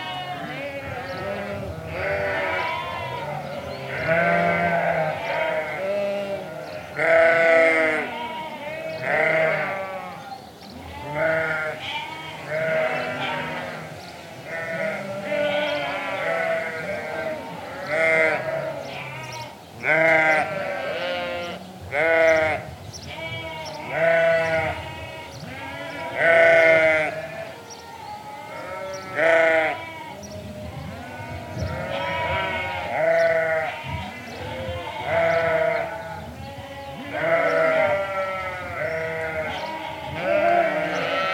The post-shearing racket, Greystoke, Cumbria, UK - Sheep all baaing after being sheared
The sheep were all baaing like mad because they had just been shorn. The clipping was all happening in a barn where we couldn't see, but the freshly shorn sheep were all in a tizzy in the main yard, bleating and looking for their friends in the chaos. Shearing the sheep involves gathering them all up then shearing them one by one, then they have to go and find their buddies afterwards, which is made harder because everyone looks different after their haircut. So they are all going crazy in this recording and the noise of the sheep is setting the sparrows off. A noisy day on the farm. It was also a bit windy so I propped the EDIROL R-09 between some rocks in a dry stone wall. The recording has a bit of a strange acoustic because of this, but without the shelter, it would have been pretty difficult to record the amazing sounds.